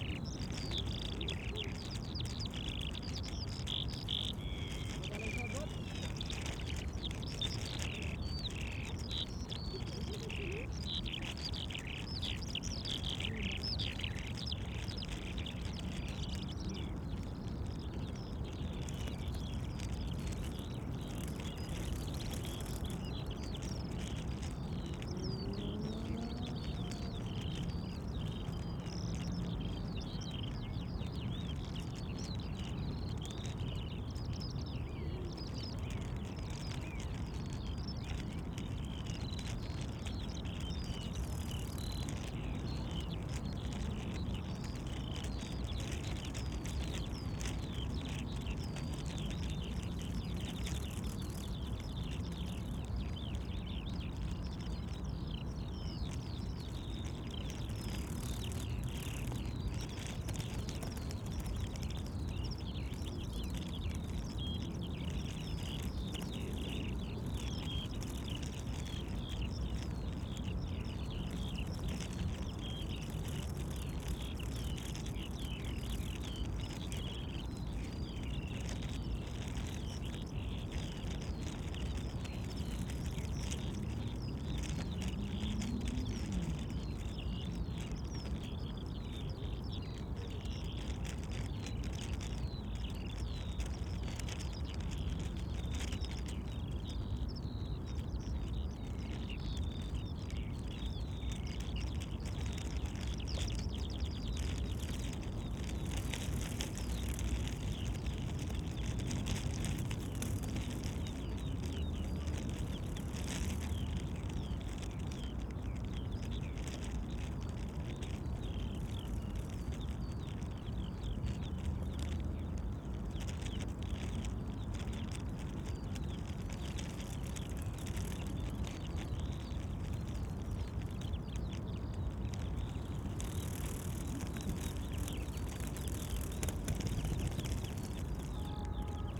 Tempelhofer Park, Berlin, Deutschland - field lark, fluttering tape
early sunday evening at former Tepelhof airport. barrier tape flutters in the wind, the song of a field lark above me. parts of the field are fenced during spring time, in order to protect the breeding birds.
(SD702, AT BP4025)
Germany, 10 May 2015